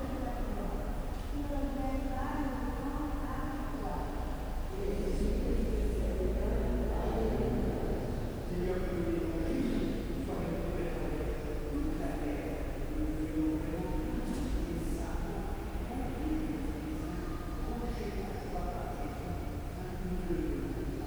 Rue Pouchet, Paris, France - Des messes de semaine
Morning mass at the Catholic Church of Saint-Joseph des Épinettes taking place in the 'Oratorie' at the back of the church. Recorded using the on-board microphones of a Tascam DR40 towards the back of the nave.